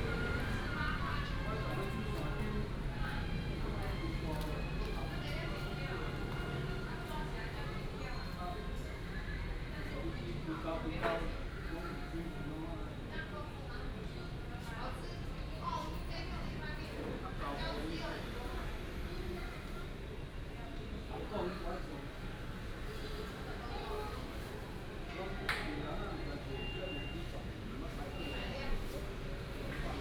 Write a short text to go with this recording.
in the Public retail market, walking in the market, Binaural recordings, Sony PCM D100+ Soundman OKM II